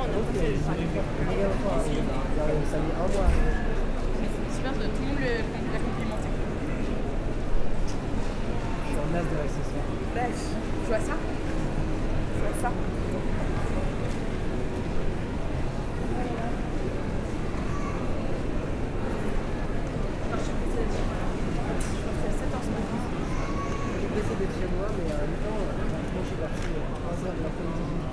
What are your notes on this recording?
Santa-Claus is giving chocolates in the very busy Paris Montparnasse station.